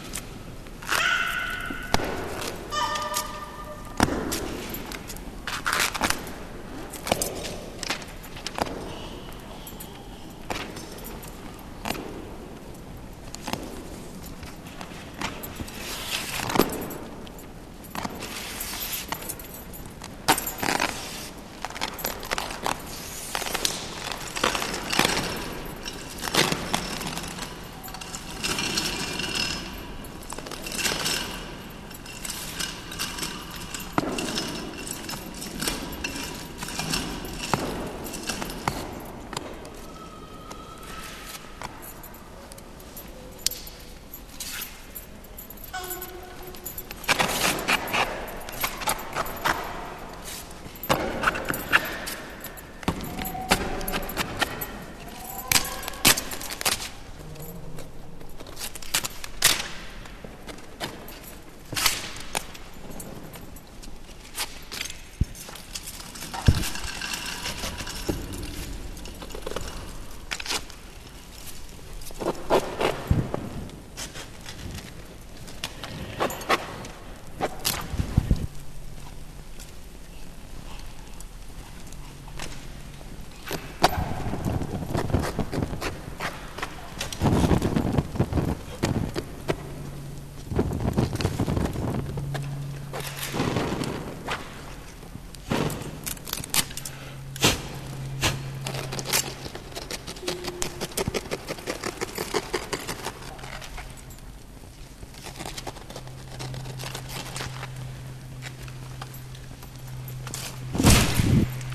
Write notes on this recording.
záznam z dílny na FAMU o konstruktivní destrukci. verze 1.